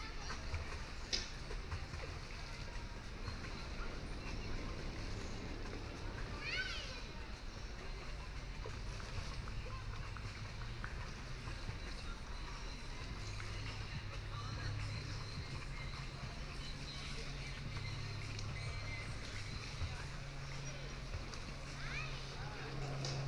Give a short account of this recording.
Spätsommeraktivitäten auf dem Neckar in Tübingen: Stocherkähne, Tretboote, Stehpaddler, Ruderboote. Kleine (aber laute) Leichtflugzeuge. Late summer activities on the Neckar river in Tübingen: Punting boats, pedal boats, paddlers, rowing boats. Small (but loud) light aircraft.